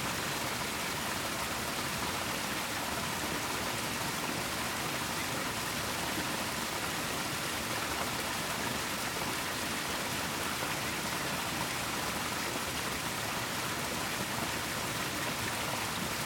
Užpaliai, Lithuania, old watermill
the waterflow under the old watermill